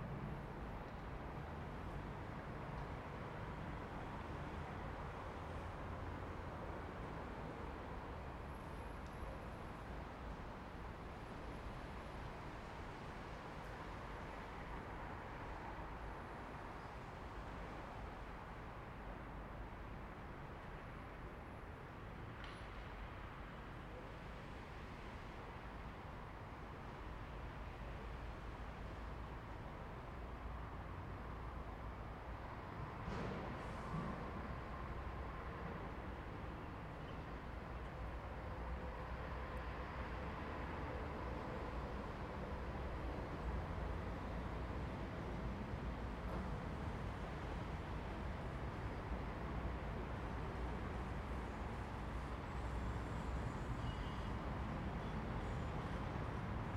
Śródmieście, Gdańsk, Polska - Bridge
City sounds recorded from a recently rebuilt bridge. Recorded with Zoom H2n.
Gdańsk, Poland